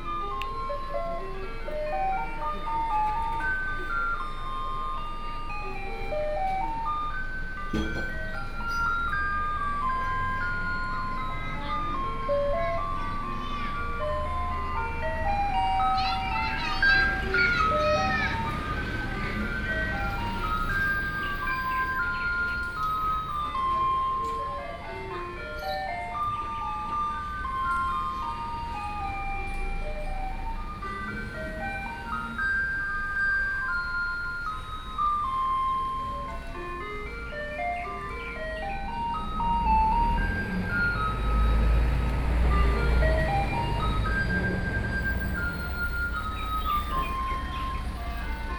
Dacheng Rd., 蘇澳鎮存仁里 - Small village
In the square, in front of the temple, Hot weather, Traffic Sound, Birdsong sound, Small village, Garbage Truck